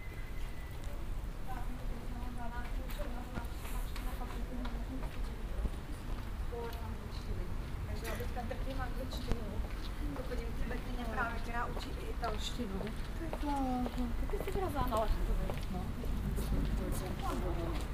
prag, nemocnice kralovske vinohrady - coffee machine
16 May 2011